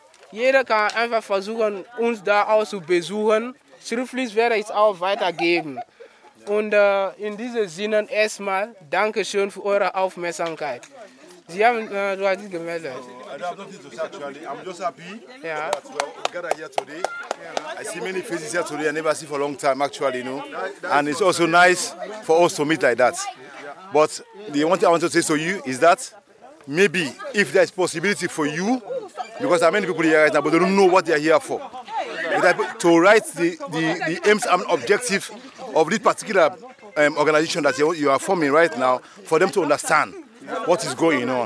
A sunny Allotment-garden near the tiny River Aase in Hamm/ Westfalen. Many African people, their friends and families are gathering, eating, drinking, dancing, and perhaps meeting each other for the first time. It’s the “know me, I know you” party of the newly-formed Yes Africa Verein. The founders and board members of the organization Nelli Foumba Saomaoro and Yemi Ojo introduce themselves and the organization and welcome everybody to get involved. While the party is getting into full swing, Nelli makes a couple of interviews with members and guests. Two samples are presented here.